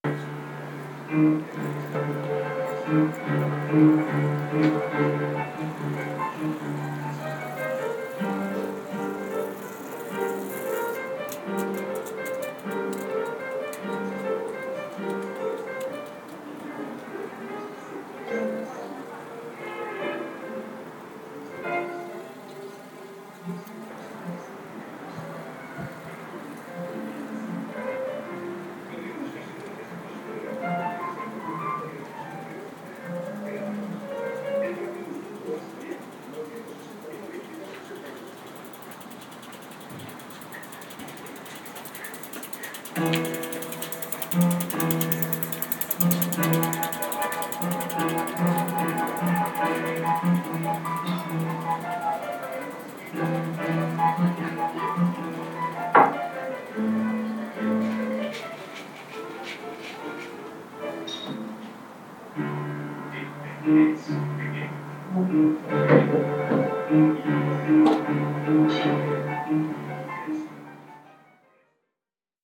Music lesson at Altea, Hiszpania - (29) BI Music lesson
Binaural recording of probably a music lesson or just rehearsing. Recorded from the street level.
ZoomH2n, Soundman OKM